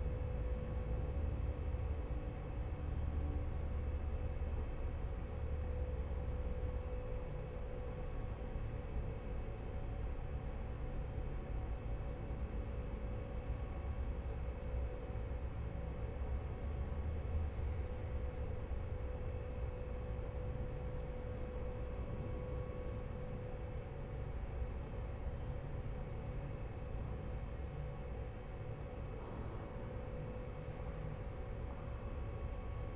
ambient sounds in the former Quelle distribution center
Nuremberg, Germany, 14 April 2011